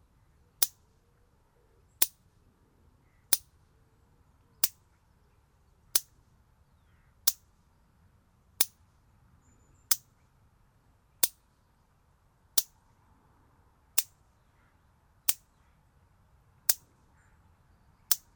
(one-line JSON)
{"title": "Anneville-Ambourville, France - Electric fence", "date": "2016-09-18 08:30:00", "description": "In a pasture, electric fence has a problem and makes big electrical noises.", "latitude": "49.45", "longitude": "0.86", "altitude": "4", "timezone": "Europe/Paris"}